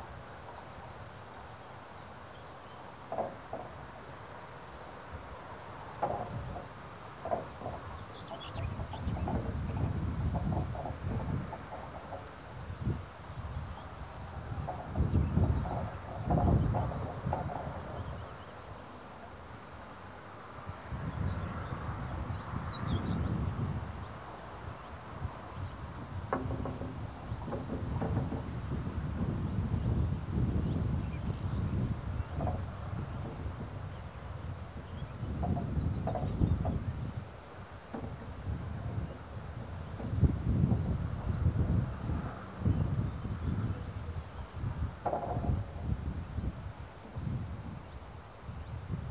Morasko, at the rose brook road - concrete fence
a fence made of concrete slabs. most slabs are loose, knocking about in their fastenings even at a slightest breeze.
Poznan, Poland, 19 June 2014, 14:45